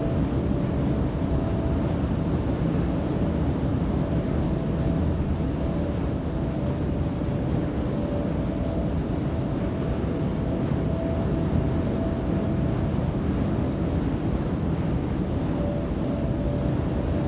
Carn Brea, Cornwall, UK - The Mast
Recorded on a windy day, beside a radio/telephone mast, the sound is the wind passing through it. I used a Tascam DR100.
14 January 2015, 15:00